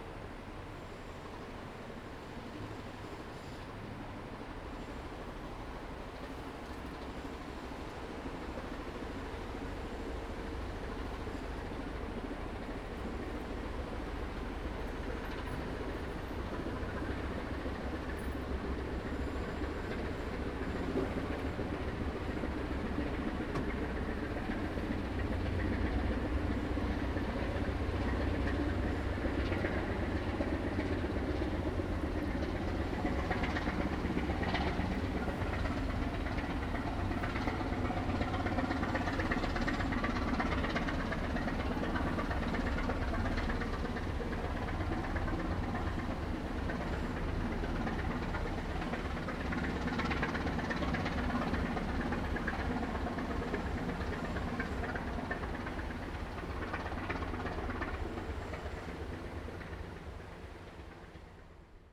{"title": "Ponso no Tao, Taiwan - In the vicinity of the fishing port", "date": "2014-10-28 15:01:00", "description": "sound of the waves, Traffic Sound, In the vicinity of the fishing port\nZoom H2n MS+XY", "latitude": "22.06", "longitude": "121.51", "altitude": "8", "timezone": "Asia/Taipei"}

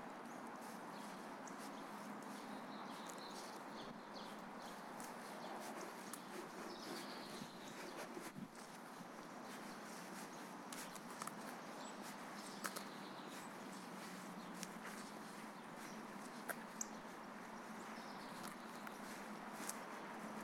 {"title": "Iowa River Corridor Trail, Iowa City, IA, United States - Sound Walk at Crandic Park", "date": "2019-04-17 14:50:00", "description": "This is a sound walk I experienced on a especially windy day, utilizing a TASCAM DR MKIII to capture the surrounding noises of the environment. The noises that can be heard can range from the chirping of birds, the sounds of the river, dogs walking and a small amount of wind seepage.", "latitude": "41.67", "longitude": "-91.56", "altitude": "196", "timezone": "America/Chicago"}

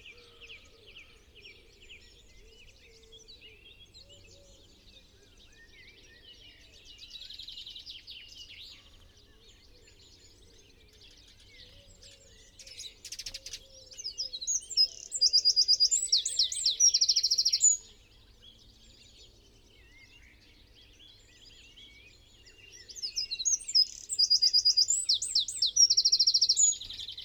{"title": "Unnamed Road, Malton, UK - dawn chorus ... 2020:05:01 ... 05.10 ...", "date": "2020-05-01 05:10:00", "description": "dawn chorus ... in a bush ... dpa 4060s to Zoom H5 ... mics clipped to twigs ... brd song ... calls from ... tree sparrow ... wren ... chiffchaff ... chaffinch ... great tit ... pheasant ... blackbird ... song thrush ... wood pigeon ... collared dove ... dunnock ... goldfinch ... starling ... crow ... jackdaw ... some traffic ... quiet skies ...", "latitude": "54.12", "longitude": "-0.54", "altitude": "80", "timezone": "Europe/London"}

